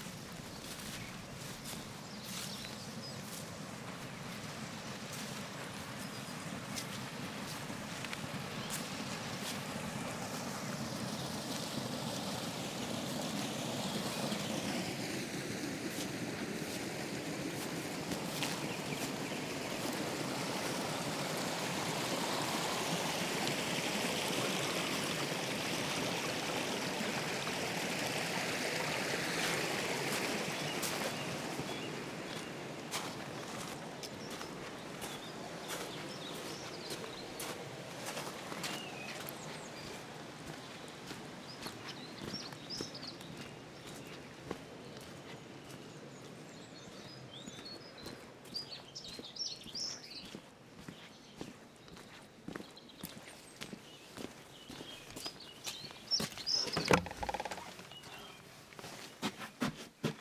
On a small farm in Wales. Using handheld Lom microphones on a stereo bar.
Cymru / Wales, United Kingdom, February 2022